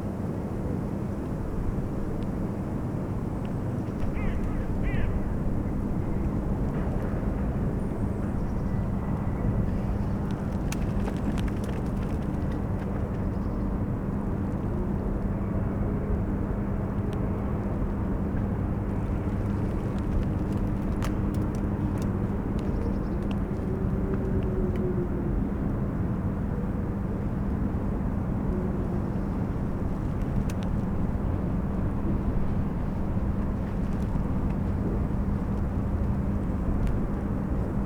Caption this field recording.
cracking ice of the frozen spree river, crows, distant sounds from the power station klingenberg, joggers and promenaders, a tree rustling in the wind, the city, the country & me: january 26, 2014